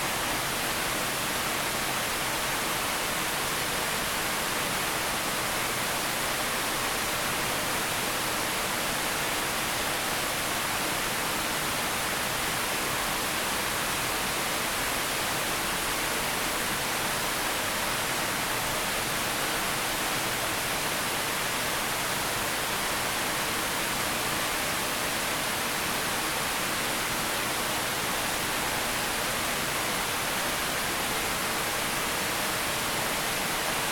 Centennial Fountain inside the Waterfall - A Cente41.88N-87.61W.waterfall
Recorded on Zoom H4N. Listening to the central waterfall inside the fountain.
IL, USA, 14 June 2017, ~19:00